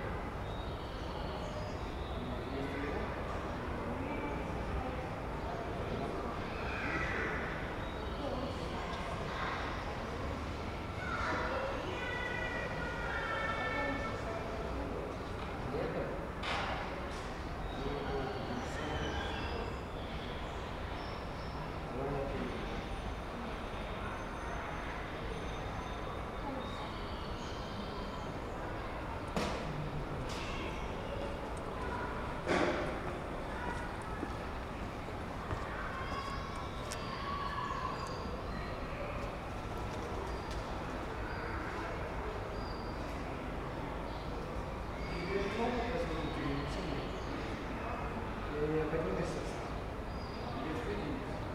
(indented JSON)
{
  "title": "Theater, Dnipro, Ukraine - Theater - Indoors [Dnipro]",
  "date": "2017-05-26 16:05:00",
  "latitude": "48.46",
  "longitude": "35.07",
  "altitude": "103",
  "timezone": "Europe/Kiev"
}